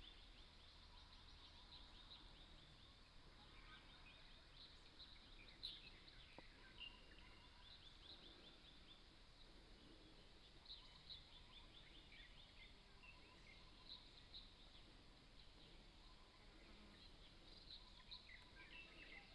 TaoMi, Nantou County - Morning in the mountains
Bird calls, Morning in the mountains, Chicken sounds